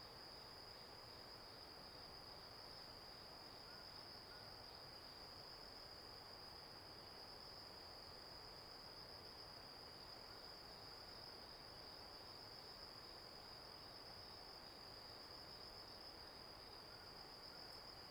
Early morning in the mountains, Insect noise, Stream sound, Birds sound
Zoom H2n MS+XY
Taitung County, Taiwan, 6 April 2018